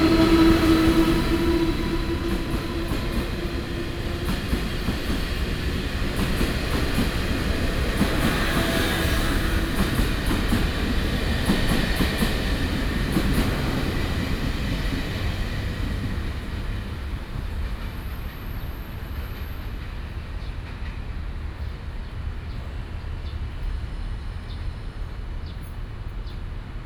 Zhenqian St., Shulin Dist., New Taipei City - Traveling by train
Traveling by train, Traffic Sound
Sony PCM D50+ Soundman OKM II